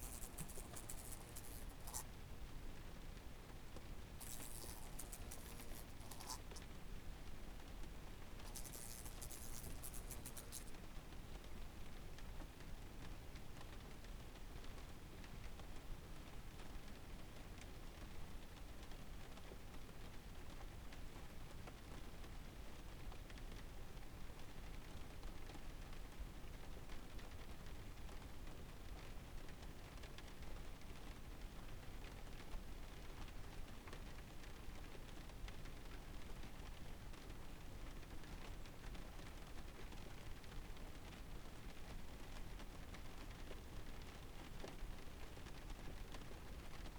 Kazitiškis, Lithuania, in the car in the rain
trapped in the car with lonely fly..rain and forest outside